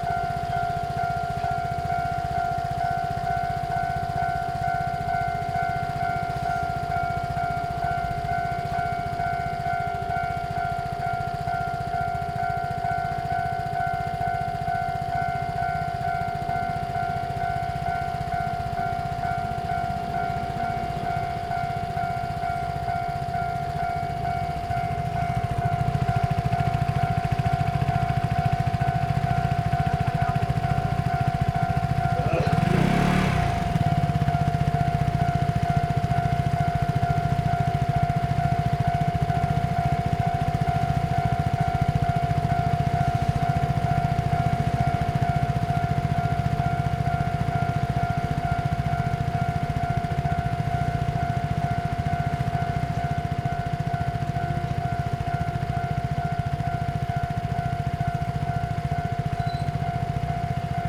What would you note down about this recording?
In the railway level road, Traffic sound, Train traveling through, Zoom H6 +Rode NT4